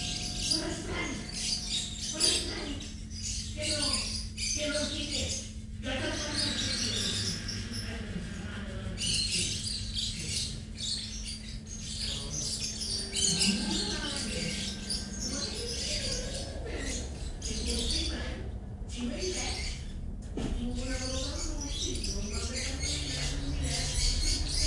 Carrer de les Eres, Masriudoms, Tarragona, Spain - Masriudoms Gathering of Elders & Birds
Recorded on a pair of DPA 4060s and a Marantz PMD661